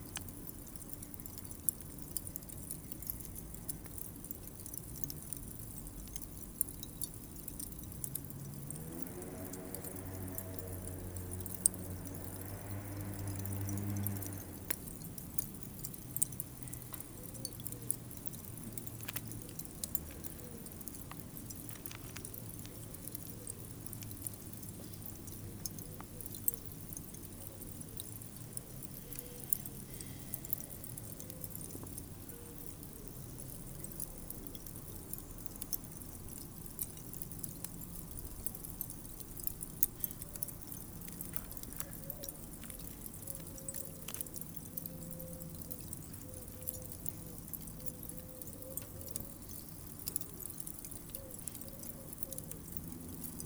Maintenon, France - Barbecue
Doing a barbecue in the garden. The charcoal becomes red.
25 July 2016